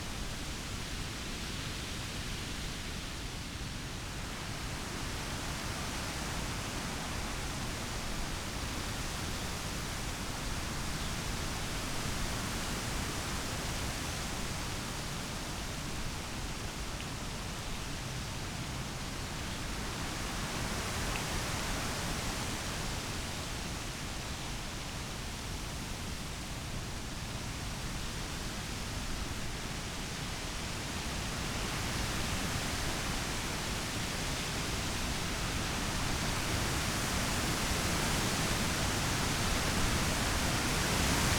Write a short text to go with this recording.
a beautiful summer evening on Tempelhofer Feld, ancient airport area, under a birch tree, a strong wind is blowing from direction west, tried to protect he mics as good as possible, in order to get a bit of that wind recorded. (Sony PCM D50, Primo Em172)